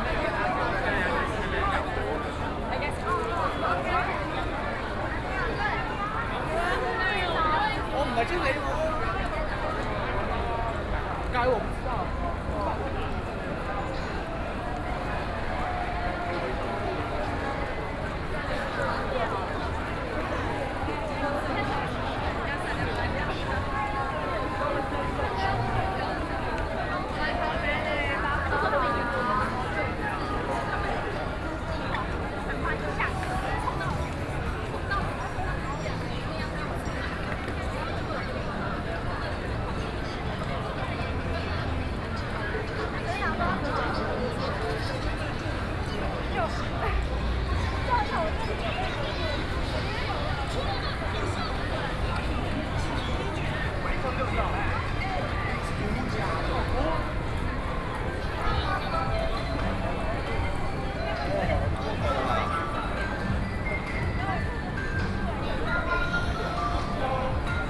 Ximending, Taipei City - soundwalk
walking from Ximen Station to Emei Street, Binaural recordings, Sony PCM D50 + Soundman OKM II